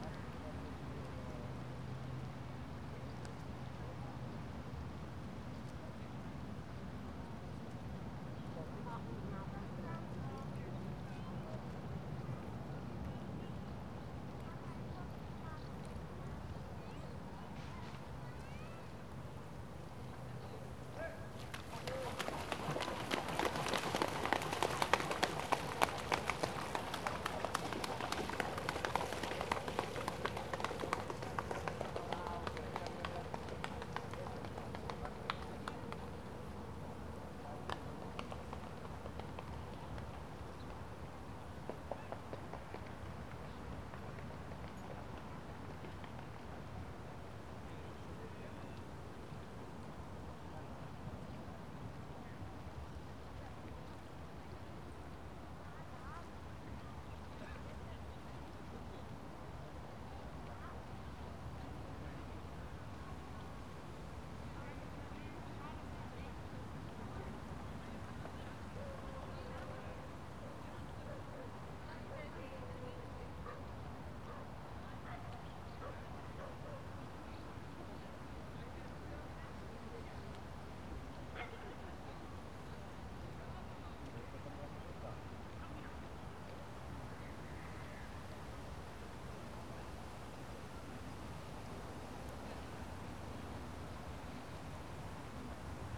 Berlin, at Landwehr Canal - makeshift boat / swans
noon ambience at the canal, a makeshift boat drifting - running its engine occasionally to change position, tourist boat passes by, swans taking off flapping their feet in the water